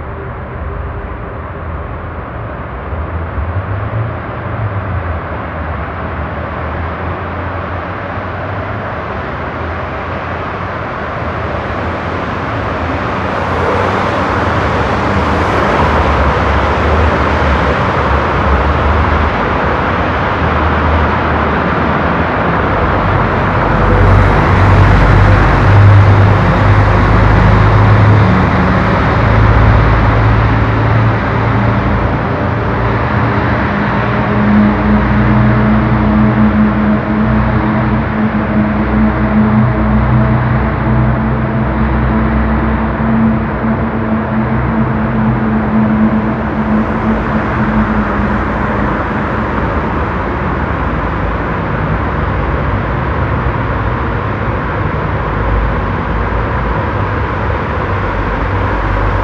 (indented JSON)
{
  "title": "velbert, langenberg, dr.hans karl glinz str, autotunnel - velbert, langenberg, dr.hans karl glinz str, autotunnel 03",
  "description": "mono richtmikrophon aufnahme in autotunnel, morgens\nsoundmap nrw: social ambiences/ listen to the people - in & outdoor nearfield recordings",
  "latitude": "51.35",
  "longitude": "7.12",
  "altitude": "130",
  "timezone": "GMT+1"
}